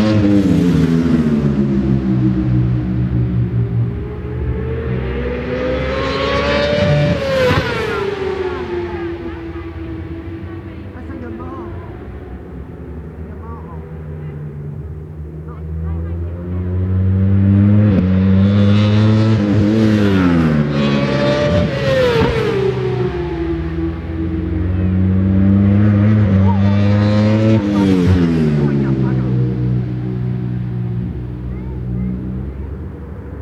West Kingsdown, UK - World Superbikes 2000 ... race two
World Superbikes 2000 ... race two ... one point stereo mic to minidisk ...